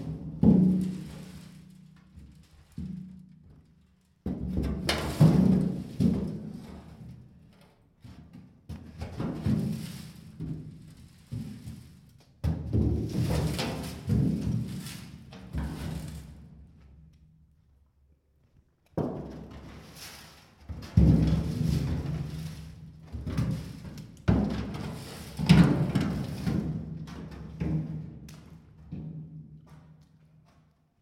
Méry-sur-Oise, France - Messing around abandonned stuff in a underground Quarry
Messing around abandonned stuff in a underground Quarry
On trouve toute sorte d'objets dans les carrières abandonnées d'Hennocque.
Un baril rouillé, des planches, des étagères...
Playing with somes rusty Oil drum and old props in a abandoned underground Quarry.
The floor is wet.
no objects were harmed in the making of this recording.
/zoom h4n intern xy mic